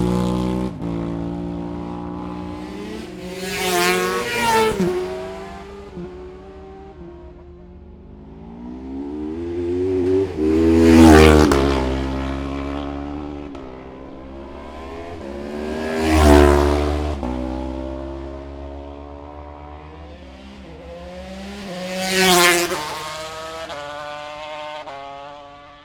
Scarborough, UK - motorcycle road racing 2012 ...
600-650cc twins qualifying ... Ian Watson Spring Cup ... Olivers Mount ... Scarborough ...
open lavalier mics either side of a furry table tennis bat used as a baffle ...grey breezy day ...